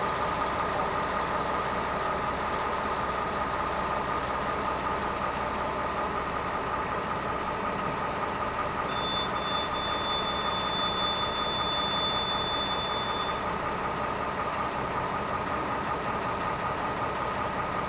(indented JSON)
{
  "title": "Benevento, Italy - train announcement",
  "date": "2012-07-20 15:45:00",
  "description": "The recording was made on the train between Benevento and Avelino, a rail line that was shut down in October 2012.",
  "latitude": "41.14",
  "longitude": "14.78",
  "timezone": "Europe/Rome"
}